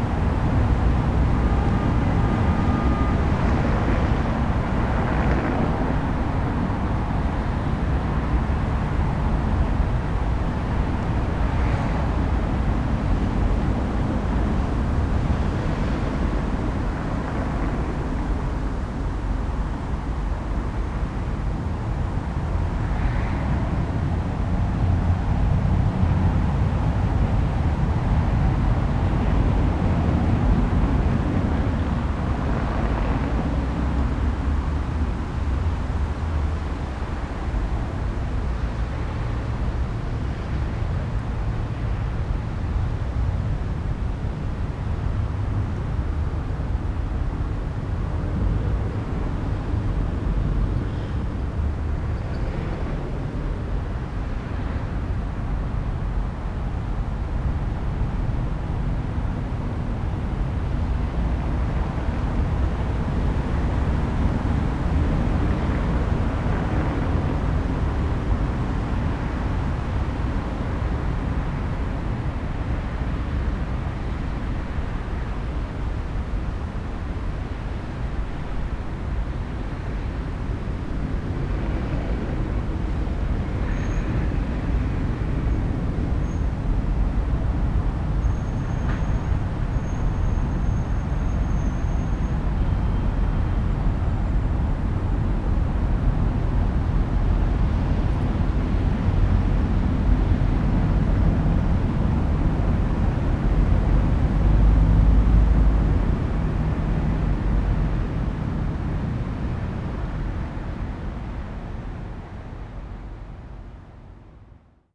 berlin: tegeler straße - the city, the country & me: waste land
traffic noise at a construction site wasteland
the city, the country & me: august 31, 2013
Berlin, Germany, 31 August 2013